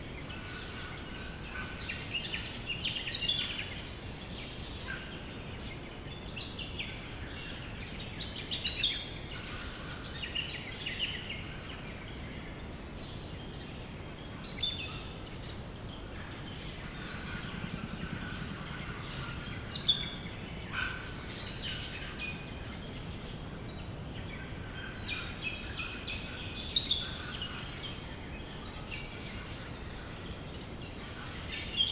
Moggs Creek Cct, Eastern View VIC, Australia - Morning birds at Moggs Creek
Otway forest alive with the sound of birds on a cold, sunny winter morning. Recorded with an Olympus LS-10.